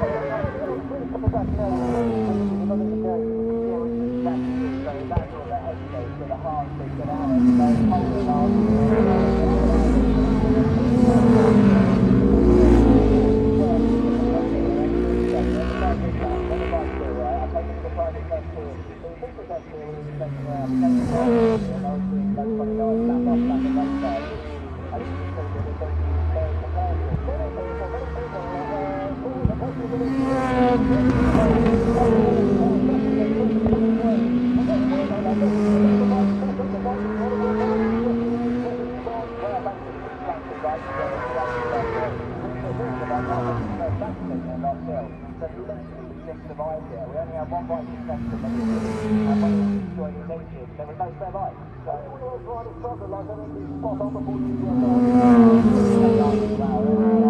Unnamed Road, Louth, UK - british superbikes 2002 ... superbikes ...
british superbikes 2002 ... cadwell park ... superbikes qualifying ... one point stereo mic to minidisk ... correct date ... time not ...